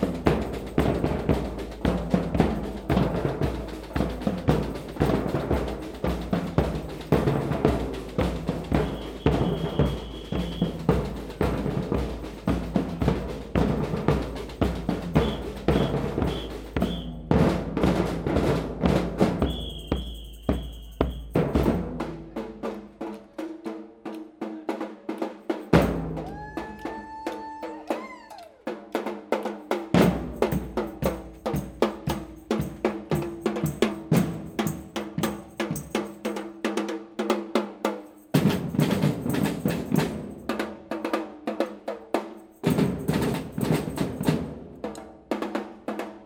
Leuven, Belgique - Gay pride parade
Gay pride parade passes through the city, with drums and whistles.
Leuven, Belgium, 13 October 2018, ~12:00